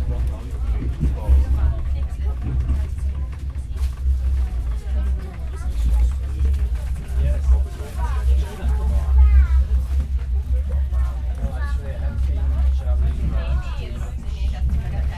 Schnellzug nach Olten, Bern, Spiez, Rollmaterial leise und gedämpft, viele Touristen und Wander.innen